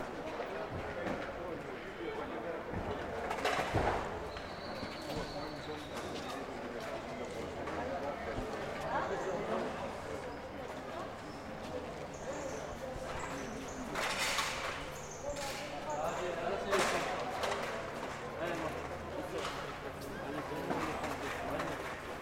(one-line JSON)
{"title": "Rue Roger Salengro, Lyon, France - Marché de ma fenêtre", "date": "2020-03-17 09:32:00", "description": "Projet : Sounds at your window - Corvis19", "latitude": "45.78", "longitude": "4.81", "altitude": "172", "timezone": "Europe/Paris"}